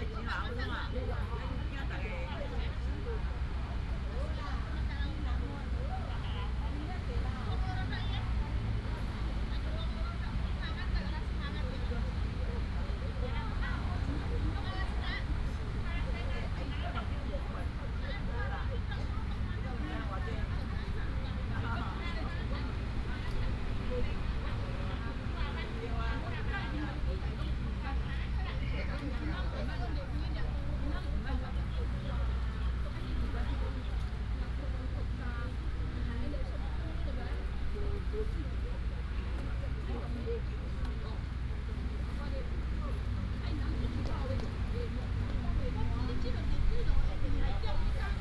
in the Park, Traffic sound, Excavator, Many elderly and foreign care workers, Binaural recordings, Sony PCM D100+ Soundman OKM II
Taichung City, Taiwan, 1 November 2017